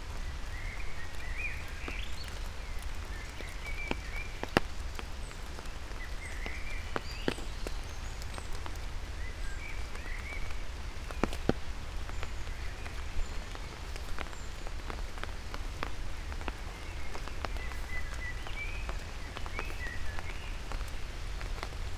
forest edge, mariborski otok - raindrops, umbrella
Kamnica, Slovenia, 27 April 2014, 19:40